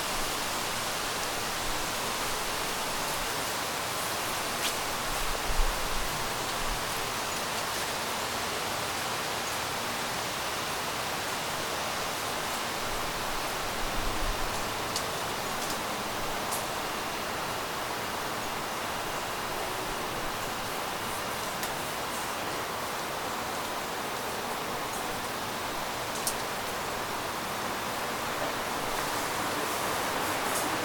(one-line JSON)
{"title": "Suffex Green Lane, GA - Autumn Atmosphere", "date": "2018-10-11 18:20:00", "description": "A recording made outside of an apartment on a beautiful, windy fall day. The recording includes leaves being blown about, nuts falling from trees, wind chimes, and, of course, vehicles driving through/past the neighborhood. The recording was made using a laptop, audacity, and a Samson Go mic, plus whatever wind protection I was using (probably no more than a simple pop filter, but I can't remember at this point). Recorded in mono.", "latitude": "33.85", "longitude": "-84.48", "altitude": "296", "timezone": "America/New_York"}